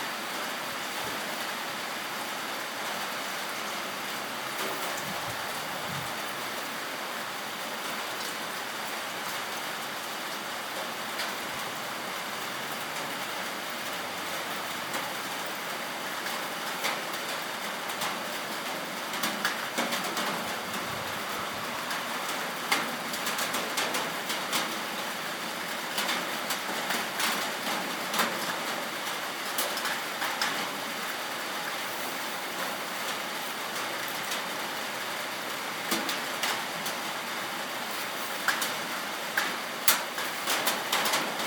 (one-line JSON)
{"title": "Claremont, CA, USA - Horse barn shelter during rainstorm Claremont foothills", "date": "2015-01-11 11:24:00", "description": "Horse barn shelter during rainstorm Claremont foothills. Recorded in mono with an iphone using the Røde app.", "latitude": "34.14", "longitude": "-117.72", "altitude": "471", "timezone": "America/Los_Angeles"}